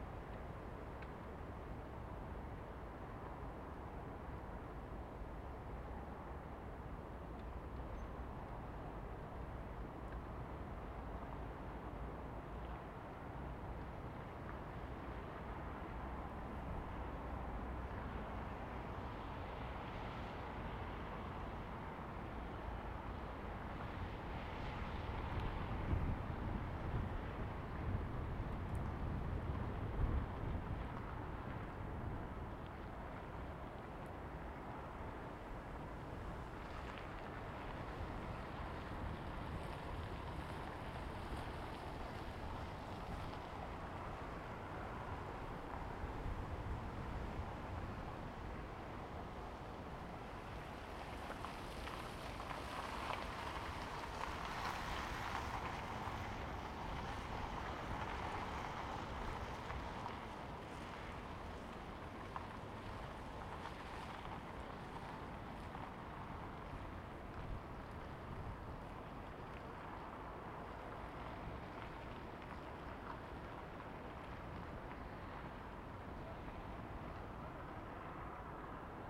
Drottning Christinas väg, Uppsala, Sweden - Uppsala Slott hill at night
A windy night on the hill in front of the Uppsala Castle. Cars driving below. Gravel sounds.
Recorded with Zoom H2n, 2ch stereo, deadcat on, held in hand.
15 February 2019